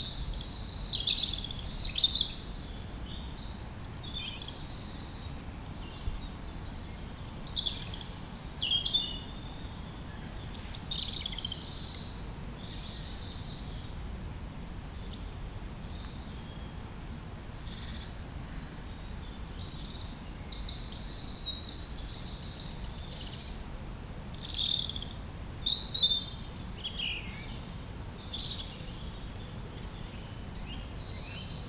Genappe, Belgique - Woods
Sound of the nature in a bucolic landscape, distant calls from the birds.
Genappe, Belgium, 9 April 2017